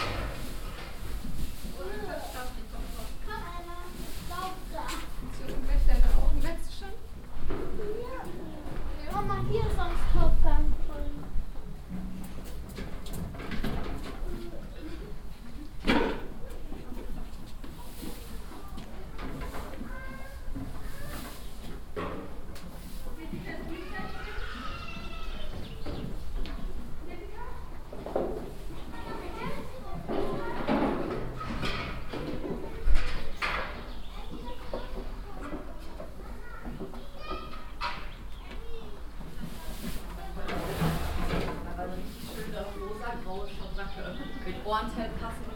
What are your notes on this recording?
inside a horse stable, the sound of eating and snorring horses, steps and kids on the cobble stone pavement, soundmap nrw - social ambiences and topographic field recordings